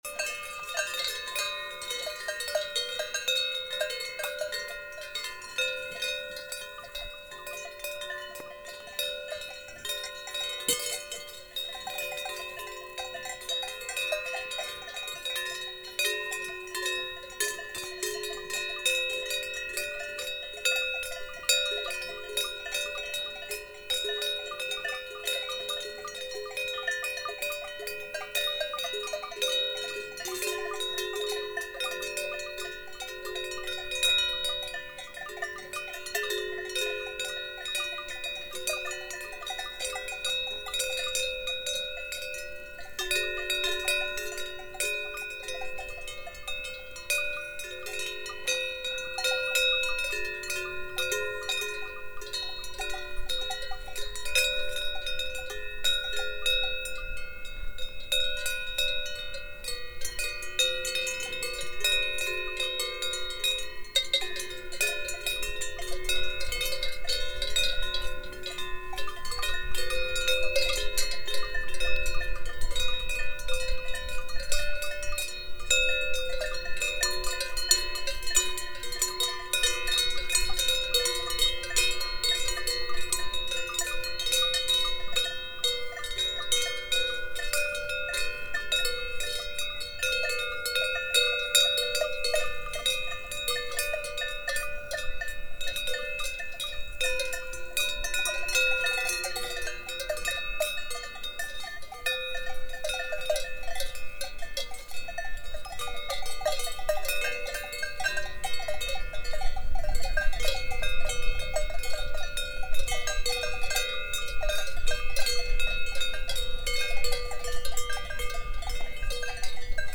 Alm, Kössen, Österreich - grazing cows
listening to the cows grazing on the alpine pasture - with their good sounding cowbells